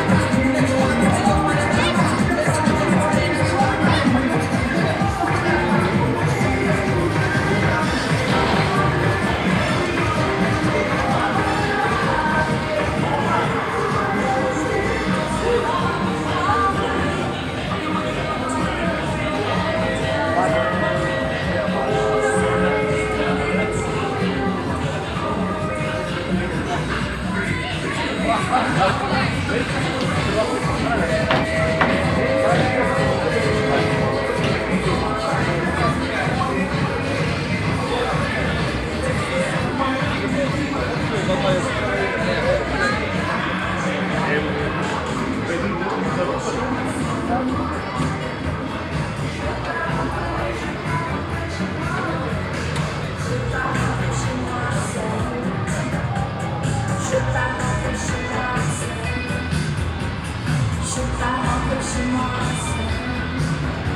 Auf der Sommerkirmes, die temporär auf einem Prakplatz im Zentrum der Stadt aufgebaut wird. Der Klang von zwei Fahrgeschäften und einigen wenigen Jugendlichen Besuchern.
At the summer fun fair, that is temporarily build up on a parking place in the center of the village. The sound of two different funfair attractions and some rare young visitors.